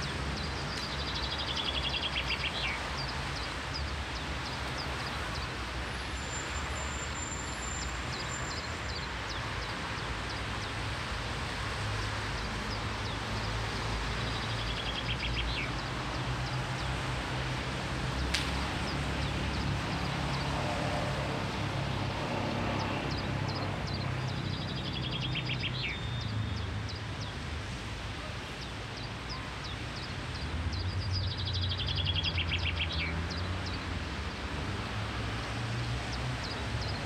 Nauener Platz in Berlin was recently remodeled and reconstructed by urban planners and acousticians in order to improve its ambiance – with special regard to its sonic properties. One of the outcomes of this project are several “ear benches” with integrated speakers to listen to ocean surf or birdsong.
Berlin, Germany